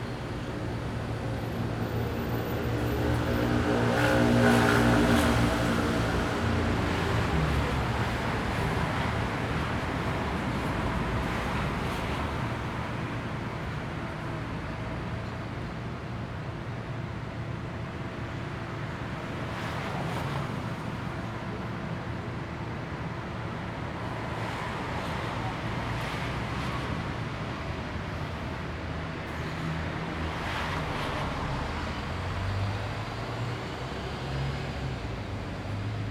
Ramat Gan, Israel - Main street 7pm
23 March, 19:00